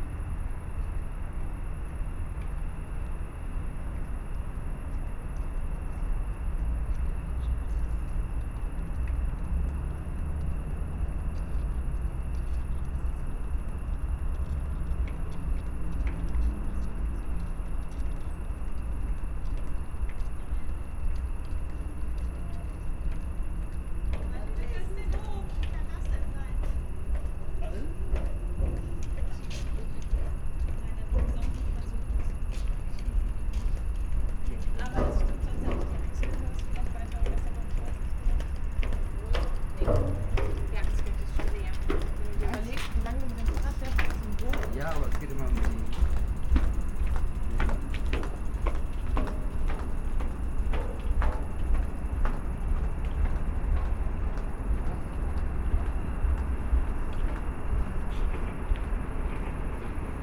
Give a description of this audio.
Köln, Mediapark, pedestrian bridge, busy train traffic here all day and night, (Sony PCM D50, Primo EM172)